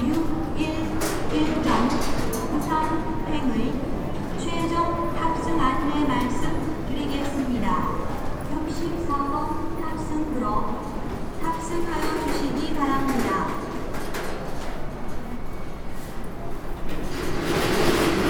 in the airports departure duty free zone, then entering and driving with the internal gate shuttle train
international city scapes - social ambiences and topographic field recordings
22 August 2010, 16:55